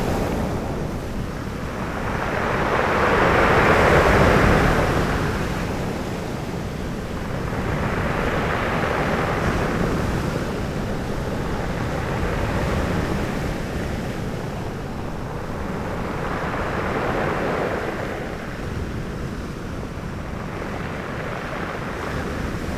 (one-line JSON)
{"title": "Patmos, Kipon, Griechenland - Brechende Wellen", "date": "2003-06-14 14:13:00", "description": "Kiesstrand\nJuli 2003", "latitude": "37.31", "longitude": "26.53", "altitude": "4", "timezone": "Europe/Athens"}